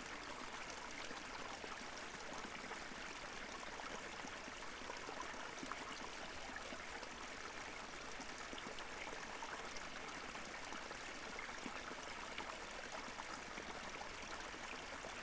Lukniai, Lithuania, at beavers dam